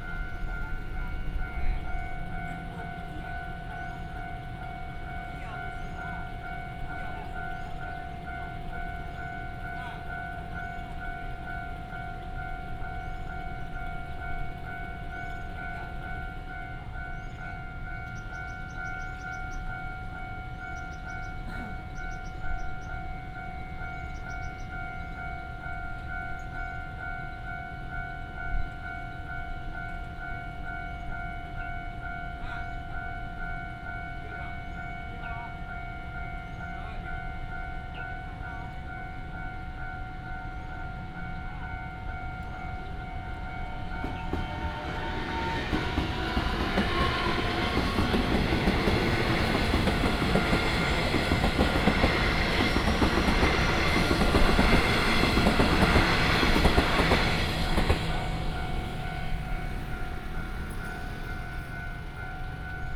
Zhongzheng W. Rd., Dacun Township - Next to the railway crossing
Next to the railway crossing, sound of the birds, Traffic sound